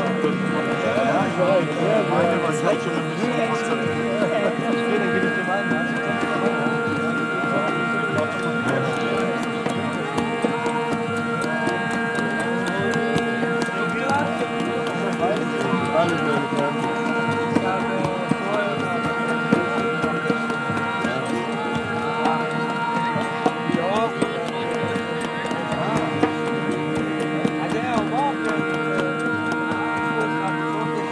Obelių seniūnija, Lithuania - Rainbow shaman
European Rainbow Gatherings in the Baltics